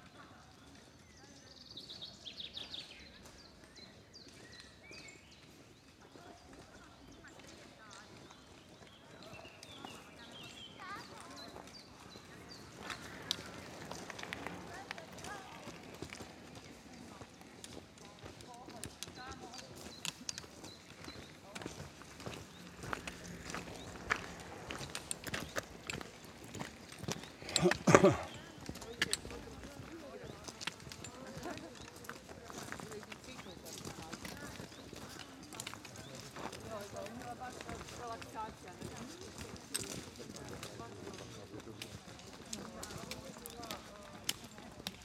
V.Pribenis, Platak, field recording
Field recording, soundscape. AKG mics via Sound Devices field mixer.
Croatia, June 2011